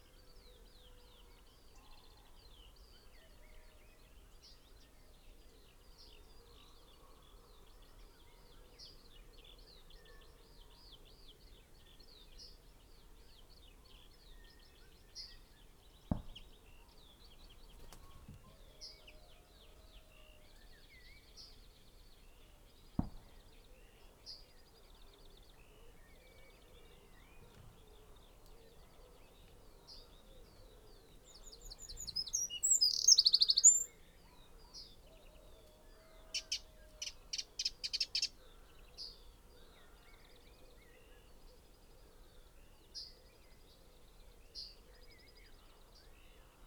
Green Ln, Malton, UK - willow warbler song soundscape ...
willow warbler song soundscape ... dpa 4060s clipped to bag in crook of tree to Zoom H5 ... bird song ... calls ... wren ... pheasant ... blackcap ... chaffinch ... wood pigeon ... blackbird ... yellowhammer ... crow ... greylag goose ... herring gull ... lapwing ...